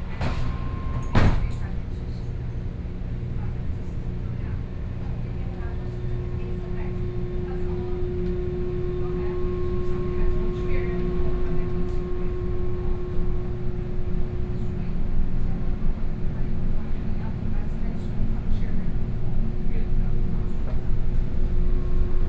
Wenshan District, Taipei - Wenshan Line (Taipei Metro)
from Wanfang Hospital station to Zhongxiao Fuxing station, Sony PCM D50 + Soundman OKM II